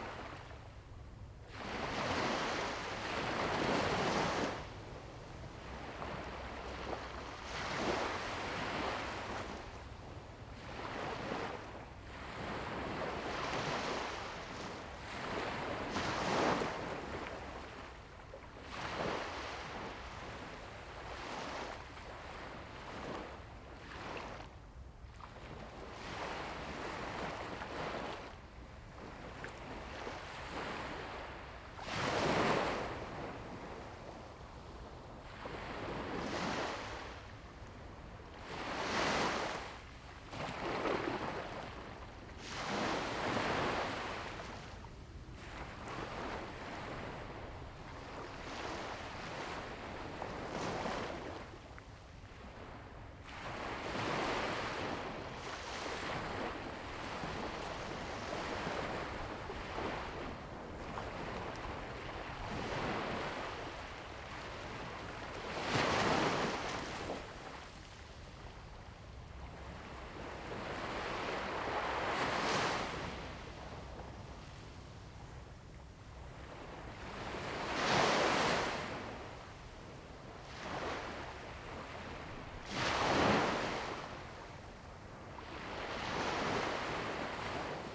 下埔下, Jinning Township - Sound of the waves
Sound of the waves
Zoom H2n MS+XY
金門縣 (Kinmen), 福建省, Mainland - Taiwan Border